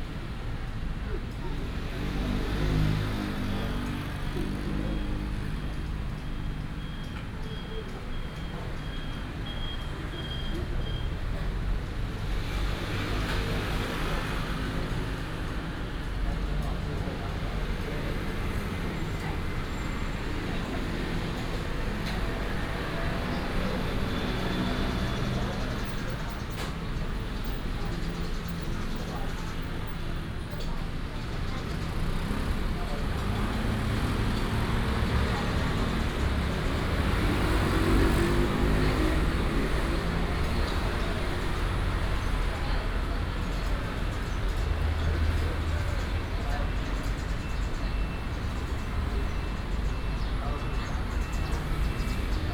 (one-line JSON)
{"title": "Boguan Rd., North Dist., Taichung City - At the junction of the snack bar", "date": "2017-03-22 13:53:00", "description": "At the junction of the snack bar, Traffic sound", "latitude": "24.16", "longitude": "120.66", "altitude": "98", "timezone": "Asia/Taipei"}